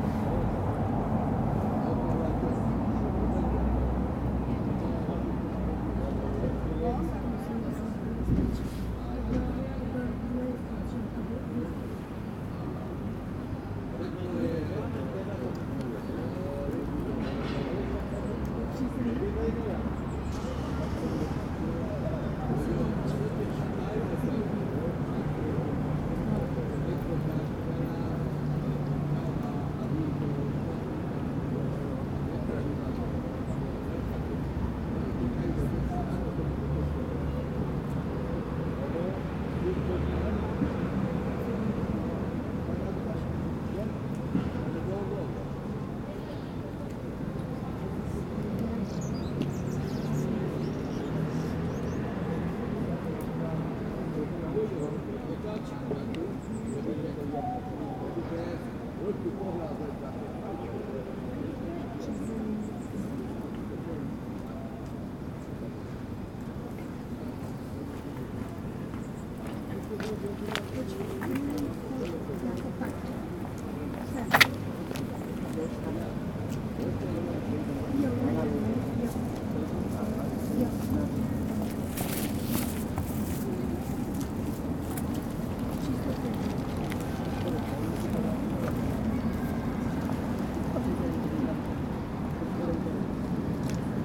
Soukenné Náměstí, Liberec /Soukenné square, Liberec (Reichenberg) - street traffic
Steet trafic on Soukenné square, recorded at lunch on a bench with Tascam DR-05X
Liberecký kraj, Severovýchod, Česká republika, 8 June 2020, ~14:00